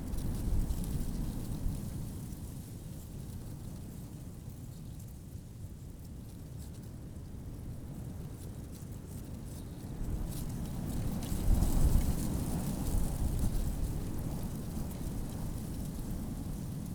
river Oder floodplain, Kienitz / Letschin - wind in dead tree
river Oder floodplain, fresh wind in a dead tree
(Sony PCM D50, DPA4060)